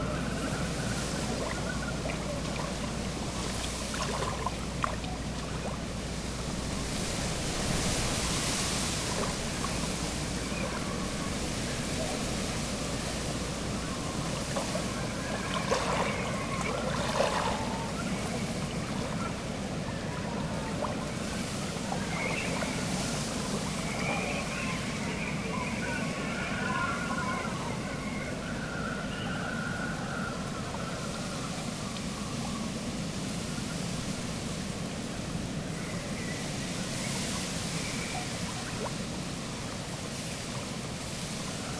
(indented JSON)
{
  "title": "workum, het zool: canal bank - the city, the country & me: canal bank, stormy weather",
  "date": "2015-06-13 17:59:00",
  "description": "stormy late afternoon, wind whistles through the rigging of ships\nthe city, the country & me: june 13, 2015",
  "latitude": "52.97",
  "longitude": "5.42",
  "timezone": "Europe/Amsterdam"
}